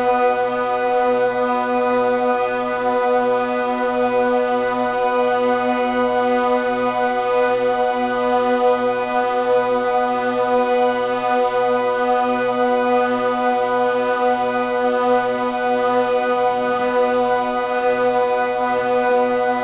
berlin, flughafenstr., staalplaat - staalplaat: michael northam playing
30.04.2009 22:55 concert by michael northam
Berlin, Deutschland, 30 April